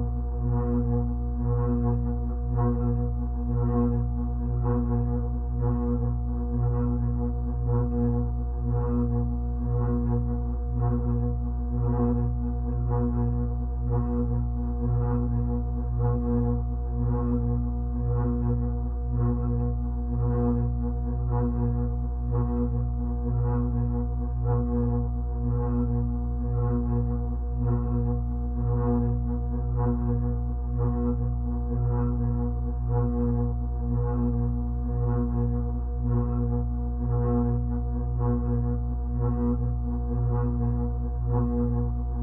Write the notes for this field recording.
Recorded with a pair of JrF c-series contact mics and a Marantz PMD661